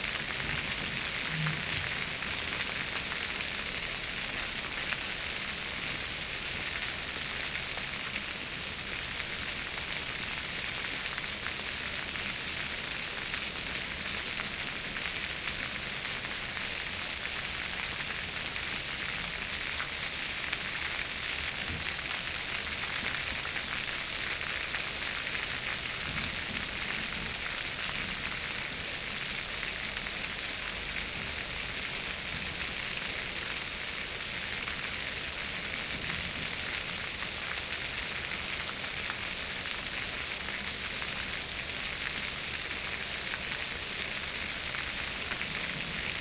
Alnwick, UK - Torricelli ... water sculpture ...
Alnwick gardens ... Torricelli by William Pye ... an installation that shows hydrostatic pressure ... starts at 01:10 mins ... finishes 05:30 ... ish ..? lavalier mics clipped to baseball cap ...